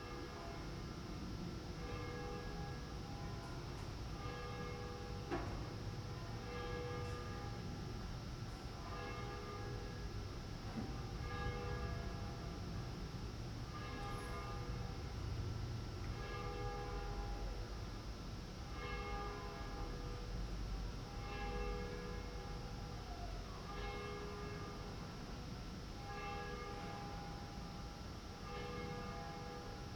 Ascolto il tuo cuore, città. I listen to your heart, city. Several chapters **SCROLL DOWN FOR ALL RECORDINGS** - Round noon with plane, howling dog and bells in the time of COVID19 Soundscape
"Round noon with plane, howling dog and bells in the time of COVID19" Soundscape
Chapter CXXVIII of Ascolto il tuo cuore, città. I listen to your heart, city
Thursday, August 27th, 2020. Fixed position on an internal terrace at San Salvario district Turin five months and seventeen days after the first soundwalk (March 10th) during the night of closure by the law of all the public places due to the epidemic of COVID19.
Start at 11:49 a.m. end at 00:11 p.m. duration of recording 30'00''